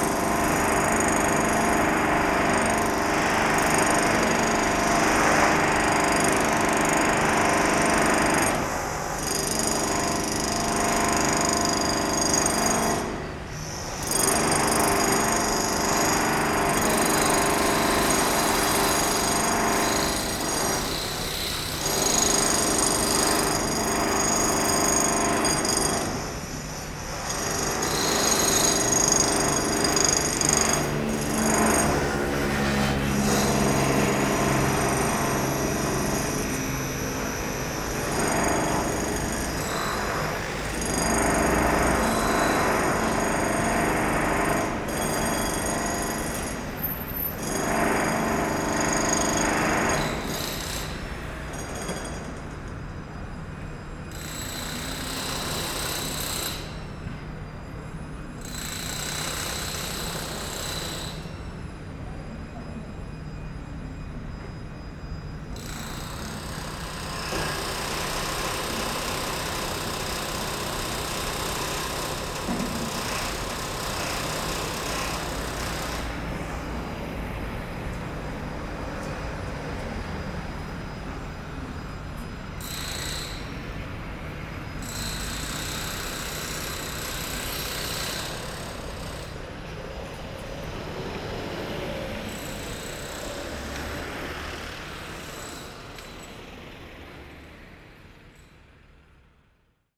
Very heavy works in a construction site. All the coast is concreted. Nearly all buildings, coming from the seventies, have the same problems. This explains there's a lot of renovation works in the same time.
Knokke-Heist, Belgium, 15 November 2018